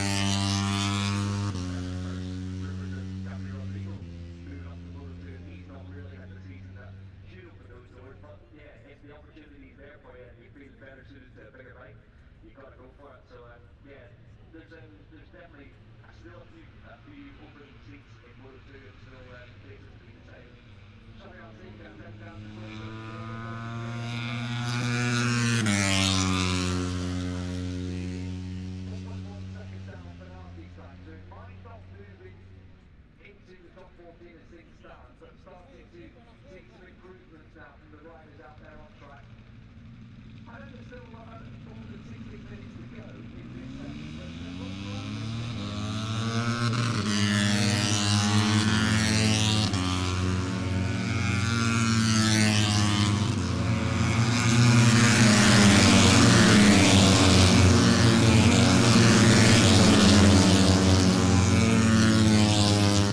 Silverstone Circuit, Towcester, UK - british motorcycle grand prix ... 2021
moto three free practice three ... copse corner ... dpa 4060s to MixPre3 ...
28 August 2021, 09:00